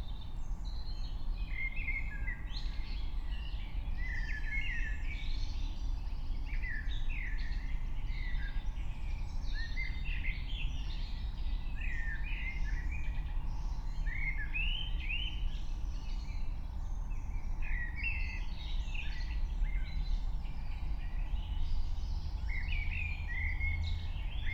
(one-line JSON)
{"date": "2021-07-05 04:00:00", "description": "04:00 Berlin, Königsheide, Teich - pond ambience", "latitude": "52.45", "longitude": "13.49", "altitude": "38", "timezone": "Europe/Berlin"}